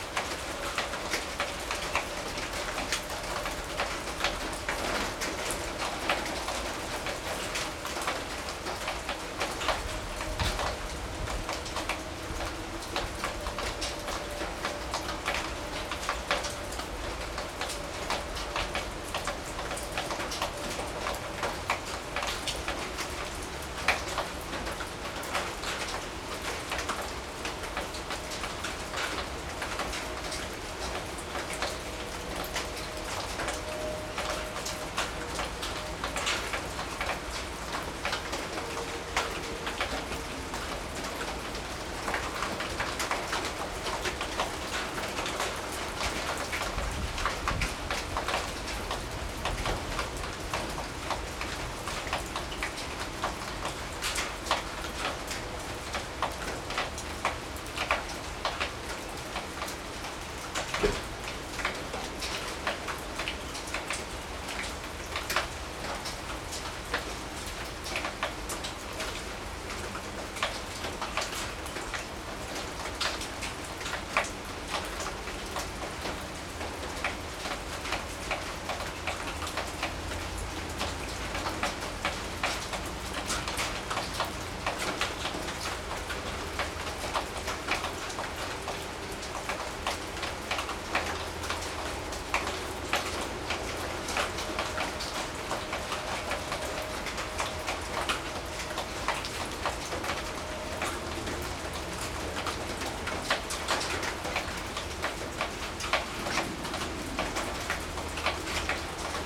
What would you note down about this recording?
Recorded with a pair of DPA4060s and a Marantz PMD661